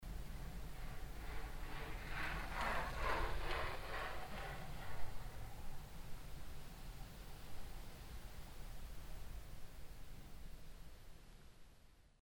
road to enscherange, cyclist
At the road to Enscherange on a mild windy summer day. The sound of a cyclist passing by. One of many who use the region to exercise.
Straße nach Enscherange, Fahrradfahrer
Auf der Straße nach Enscherange an einem milden windigen Sommertag. Das Geräusch von einem vorbeifahrenden Radfahrer. Einer von vielen Fahrern, die in der Gegend trainieren.
route d'Enscherange, cycliste
Sur la route d’Enscherange, un jour d’été doux et venteux. Le bruit d’un cycliste qui passe. Un cycliste parmi les nombreux qui s’entraînent dans la région.